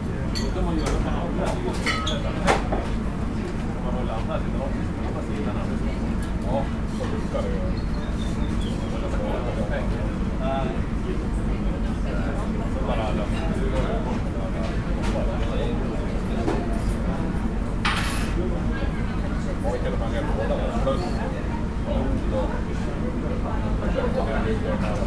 Sounds of the restaurant onboard of a Caledonian MacBrayne ferry to the Isle of Islay.
Recorded with a Sound Devices MixPre-6 mkII and a pair of stereo LOM Uši Pro.